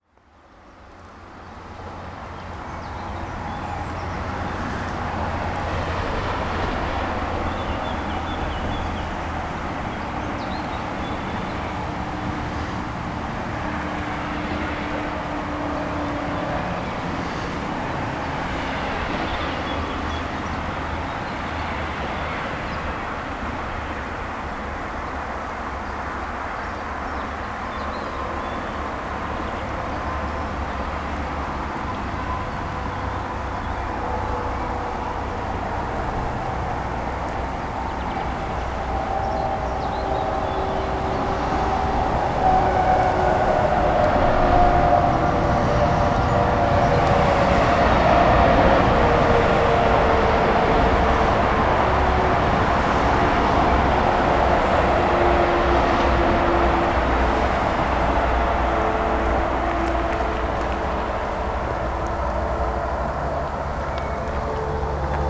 Schwäbisch Gmünd, Deutschland - River and Bridge
The sound of cars driving over a bridge that passes a river.